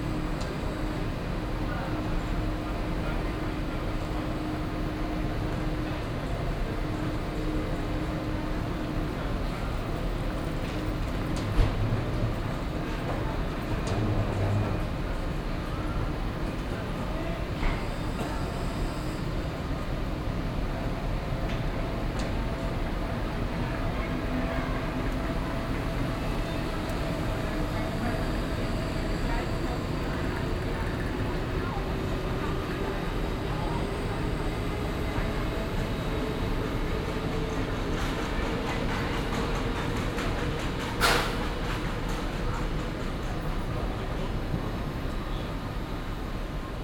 dortmund, hbf, gleis 8
betrieb und ansage auf gleis 8, am frühen abend
soundmap nrw: topographic field recordings & social ambiences